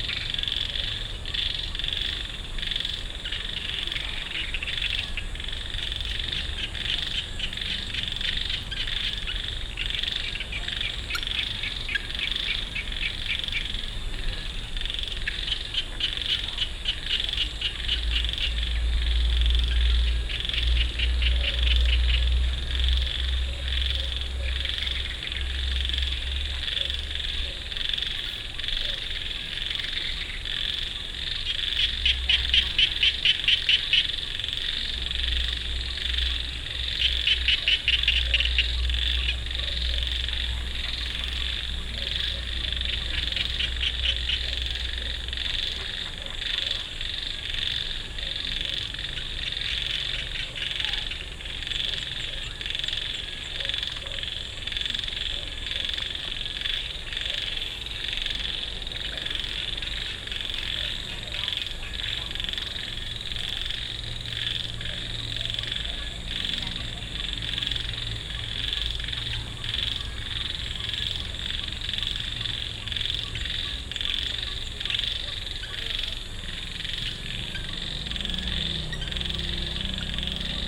More clattering frogs and birds at the pond and rice field in front of Puh Annas most beautiful guesthouse, very peaceful and lively at the same time.
Amphoe Hot, Chang Wat Chiang Mai, Thailand, 20 August